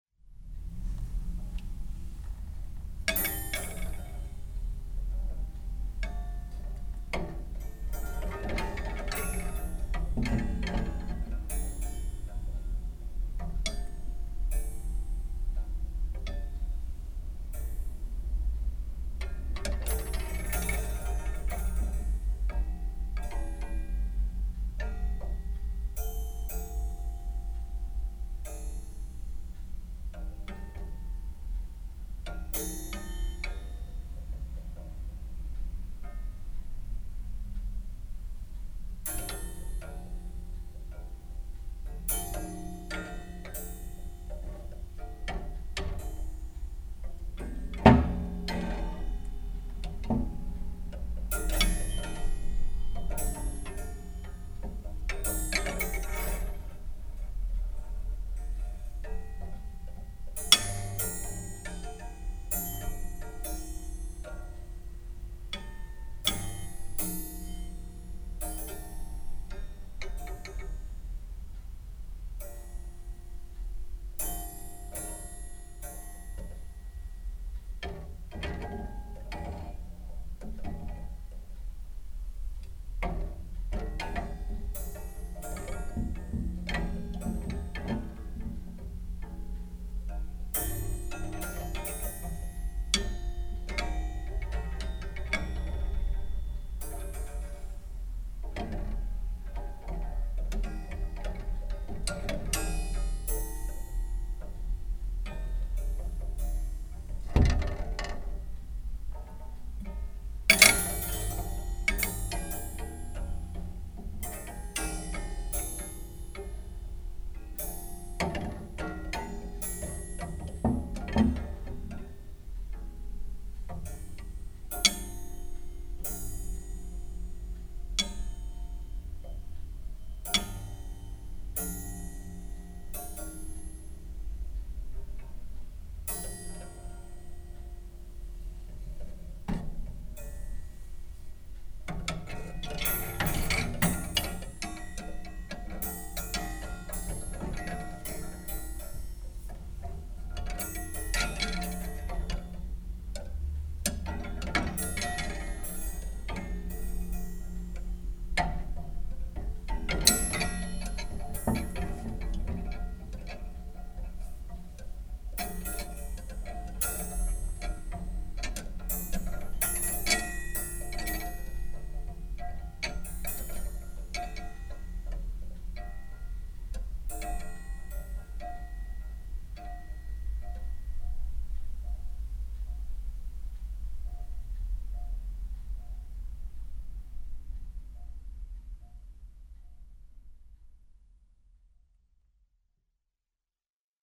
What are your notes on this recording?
When I arrived in my B&B for the Tuned City workshops, I noticed the amazing sounds of the wardrobe and the mismatched hangers inside it. I immediately documented the sounds of these coathangers inside this nice creaky old wardrobe, knowing that as soon as I put my clothes inside it, the soundwaves will not be as resonant. Recorded with SP-TFB-2-80018 Sound Professionals Low Noise In-Ear Binaural Microphones, hung over the bar inside the wardrobe where the coathangers hang, so as close to the sound source as possible.